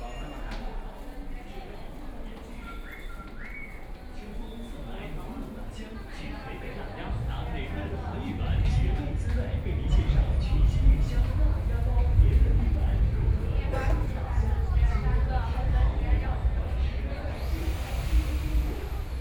Zhongshan Park Station, Changning District - walking in the Station
walking in the Station, Broadcasting messages in the Station, Binaural recording, Zoom H6+ Soundman OKM II
Changning, Shanghai, China, 23 November, ~14:00